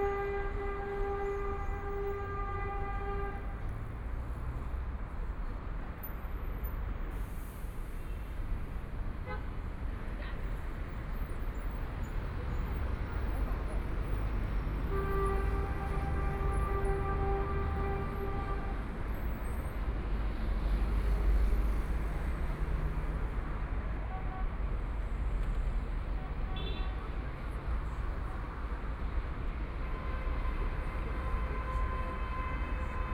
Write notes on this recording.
Walking on the road, Binaural recording, Zoom H6+ Soundman OKM II